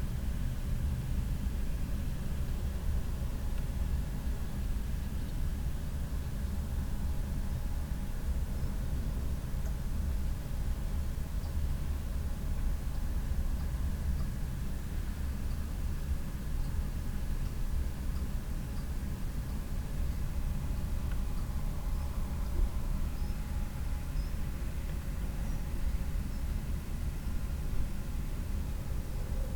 aubignan, grape plantage
In the early morning time. The silent, hightone atmosphere of the fields filled with insects and birds. A plane passing by in the far distance and the sound of the cutting of grape vines with a scissor.
international sonic ambiences and scapes
Aubignan, France, 28 August 2011